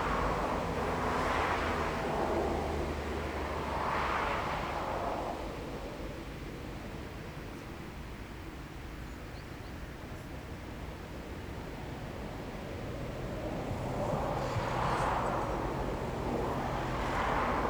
berlin wall of sound-falkenseer str. j.dickens160909